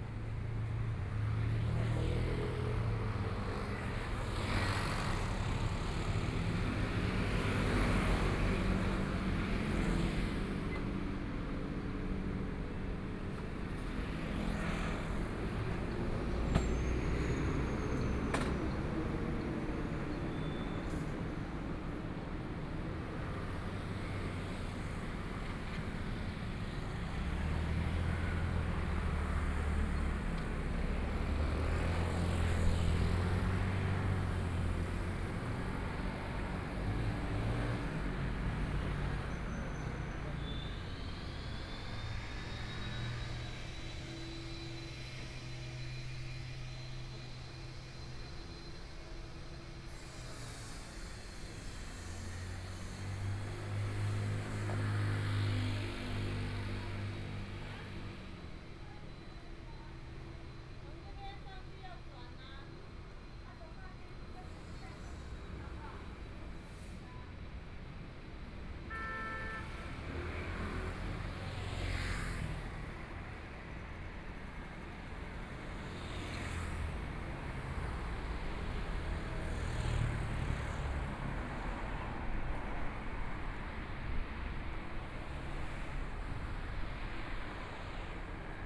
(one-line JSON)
{"title": "Jinzhou St., Zhongshan Dist. - Walking through the Stree", "date": "2014-02-15 18:25:00", "description": "Walking through the Street, Sound a variety of shops and restaurants, Traffic Sound, Walking towards the west direction\nPlease turn up the volume a little.\nBinaural recordings, Zoom 4n+ Soundman OKM II", "latitude": "25.06", "longitude": "121.53", "timezone": "Asia/Taipei"}